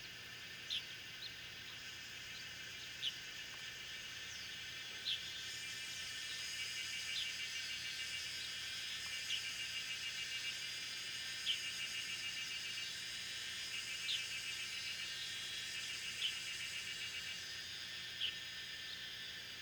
{
  "title": "種瓜路, 桃米里 Nantou County - Cicada and Bird sounds",
  "date": "2016-06-06 17:37:00",
  "description": "Cicada sounds, Bird sounds\nZoom H2n Spatial audio",
  "latitude": "23.94",
  "longitude": "120.92",
  "altitude": "495",
  "timezone": "Asia/Taipei"
}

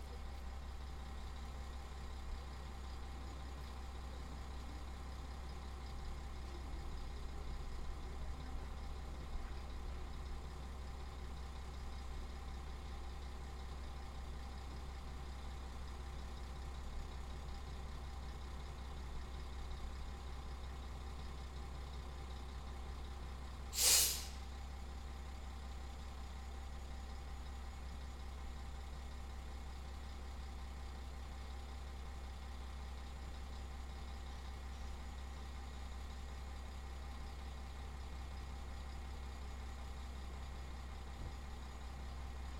{
  "title": "Adršpach, Czechia, a walk to train station",
  "date": "2017-08-13 15:20:00",
  "latitude": "50.62",
  "longitude": "16.12",
  "altitude": "511",
  "timezone": "Europe/Prague"
}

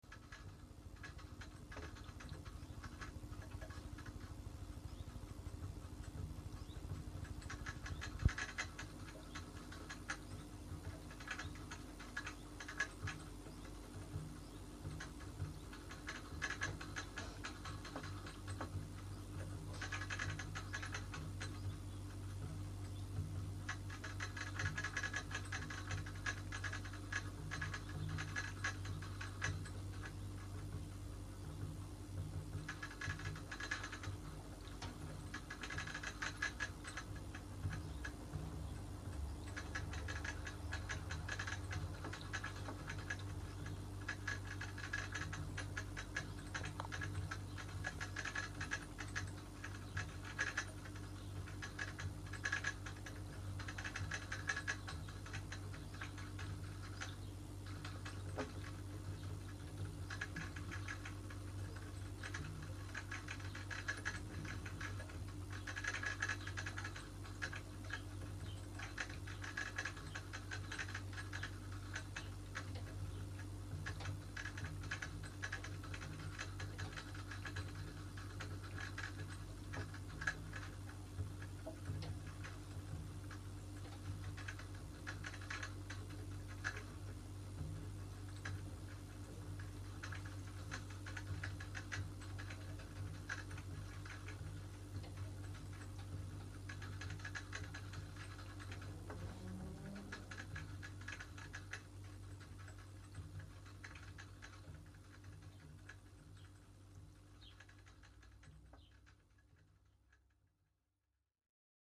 {
  "title": "Bastendorf, Tandel, Luxemburg - Bastendorf, bio nursery, rabbit hutches",
  "date": "2012-08-07 11:20:00",
  "description": "In der Bio Gärtnerei \"am gärtchen\". Die Klänge von Kaninchen in ihren Ställen\nAt the bio nursery \"am gärtchen\". The sounds of rabbits in their hutches",
  "latitude": "49.89",
  "longitude": "6.16",
  "altitude": "222",
  "timezone": "Europe/Luxembourg"
}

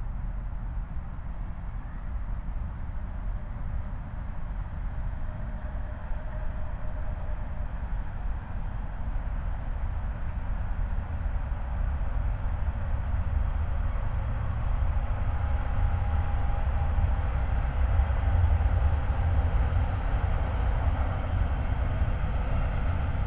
{
  "title": "Moorlinse, Berlin Buch - near the pond, ambience",
  "date": "2020-12-23 16:19:00",
  "description": "16:19 Moorlinse, Berlin Buch",
  "latitude": "52.64",
  "longitude": "13.49",
  "altitude": "50",
  "timezone": "Europe/Berlin"
}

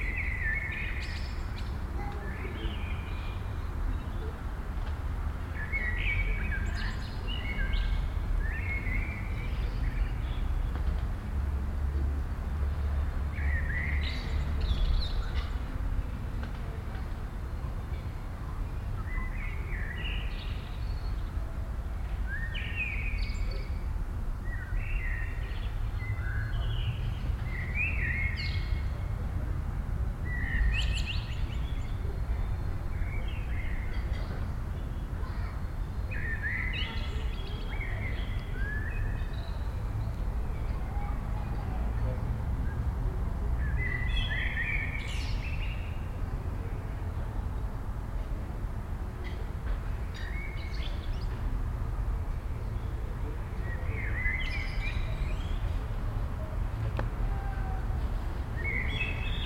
{
  "title": "Wik, Kiel, Deutschland - Evening in the courtyard",
  "date": "2017-05-18 19:48:00",
  "description": "Evening atmosphere in a courtyard of an urban residential district. Many blackbirds, some people talking and having their evening meal on their balcony. In a distance some children at play. Omnipresent traffic hum.\nBinaural recording, Soundman OKM II Klassik microphone with A3-XLR adapter and windshield, Zoom F4 recorder.",
  "latitude": "54.35",
  "longitude": "10.10",
  "altitude": "24",
  "timezone": "Europe/Berlin"
}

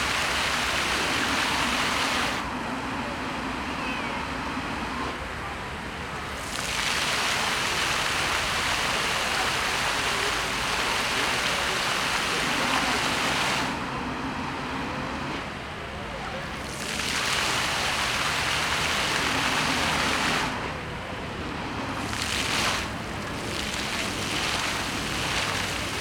{
  "title": "Skwer 1 Dywizji Pancernej WP, Warszawa, Pologne - Multimedialne Park Fontann (a)",
  "date": "2013-08-17 11:36:00",
  "description": "Multimedialne Park Fontann (a), Warszawa",
  "latitude": "52.25",
  "longitude": "21.01",
  "altitude": "83",
  "timezone": "Europe/Warsaw"
}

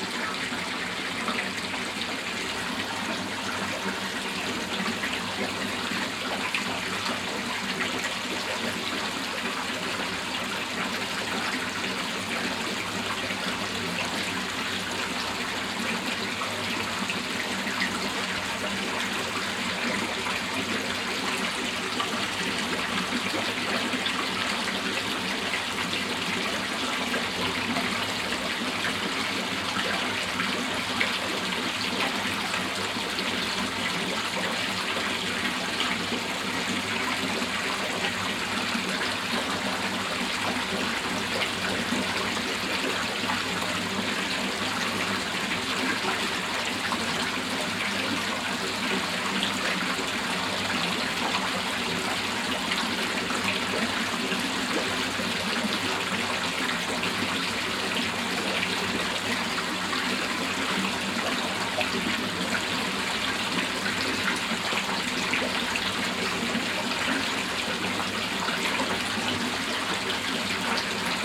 {
  "title": "Heinerscheid, Luxemburg - Kalborn, Kalborn Mill, fish basin",
  "date": "2012-08-06 11:30:00",
  "description": "An der Kalborner Mühle in einem alten Gebäudeteil der historischen Mühle, der als Standort für die zur Muschelverbreitung benötigten Fischbecken genutzt wird.\nInside an old building part of the historical mill, that is now used to place fish basins. The fish are needed to ring back the mussels into the river water.",
  "latitude": "50.10",
  "longitude": "6.13",
  "altitude": "314",
  "timezone": "Europe/Berlin"
}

